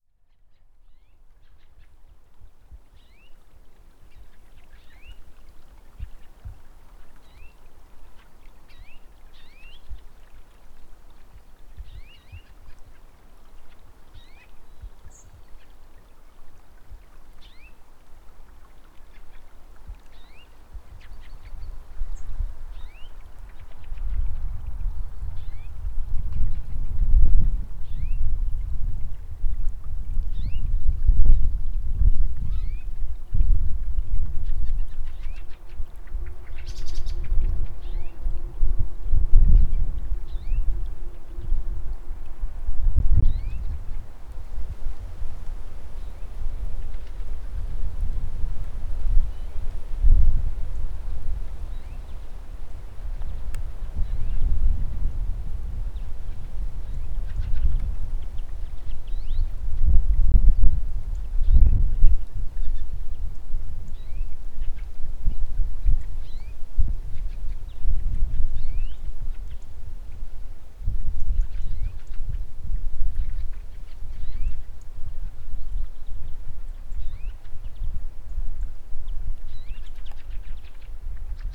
birds chirping in a Chalpowski alley nature reserve
27 January